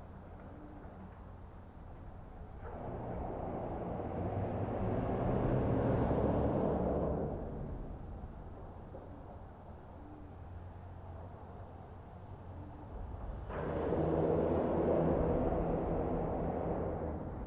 Manistee River Channel (Maple St.), Manistee, MI - Underneath the Maple Street Bridge
Vehicles pass overhead on a Tuesday afternoon, a few steps off the River Walk. Stereo mic (Audio-Technica, AT-822), recorded via Sony MD (MZ-NF810, pre-amp) and Tascam DR-60DmkII.
Manistee, MI, USA, 22 March 2016, 13:20